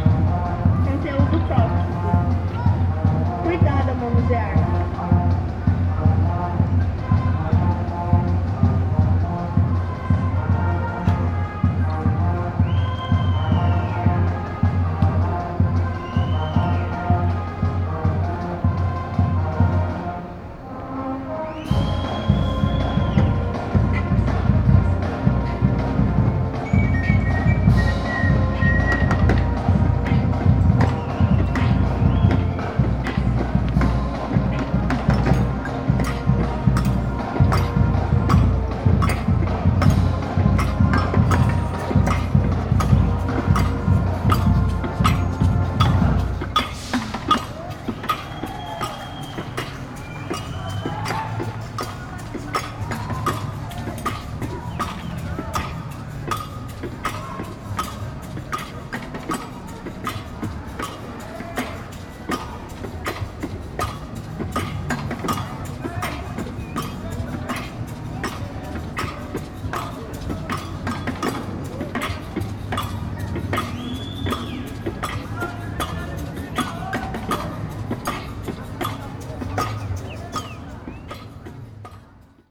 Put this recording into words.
Panorama sonoro: banda marcial com instrumentos de sopro e percussão finalizava sua apresentação no Calçadão nas proximidades da Praça Willie Davids. Nessa Praça, um grupo de estudantes de teatro apresentava uma peça utilizando microfones, músicas, violão e instrumentos de percussão. Pessoas acompanhavam tanto a apresentação da banda quanto do grupo. Os sons das duas apresentações se sobrepunham. Sound panorama: Marching band with wind instruments and percussion finalized its presentation in the Boardwalk near the Place Willie Davids. In this Square, a group of theater students presented a play using microphones, music, guitar and percussion instruments. People followed both the band and the group presentation. The sounds of the two presentations overlapped.